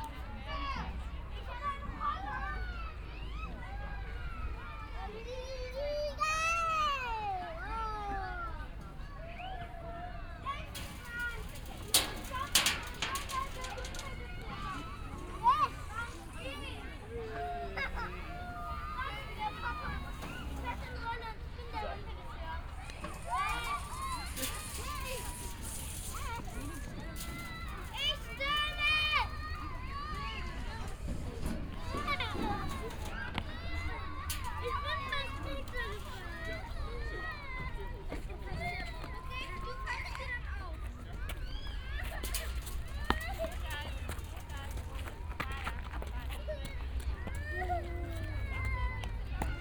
koeln, mediapark, playground - children on slide
playground in Mediapark, children having fun on the big slide (binaural, use headphones!)
Köln, Deutschland, 10 October 2010, ~17:00